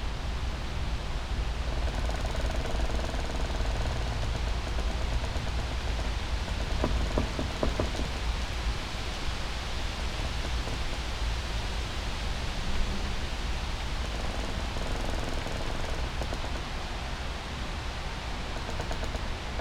poplar woods, Drava river, Slovenia - creaks, winds, distant traffic hum
tiny area between old river bed and the canal, with still water in the middle, encased with old poplar trees